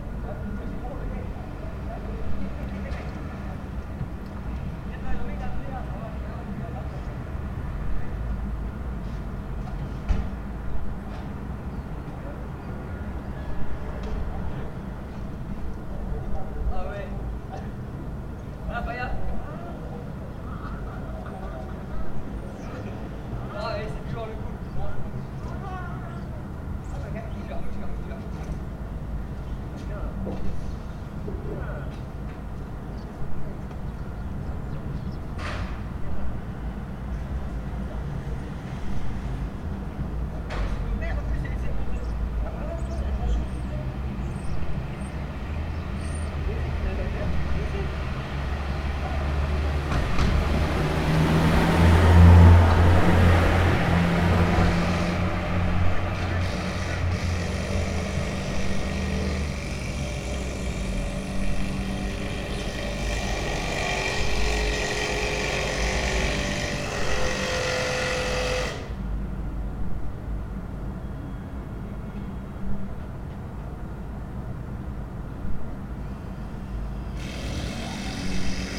Rue Branville, Caen, France - On the Roof
Workers on a roof in a little street. Recorded from 2nd floor with H4…
20 September, 16:10